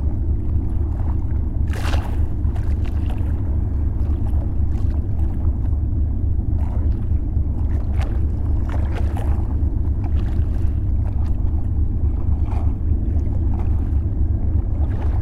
Normandie, France - Enormous boat
An enormous gas supertanker is passing by on the Seine river, going to Rouen. It makes big waves with the boat track.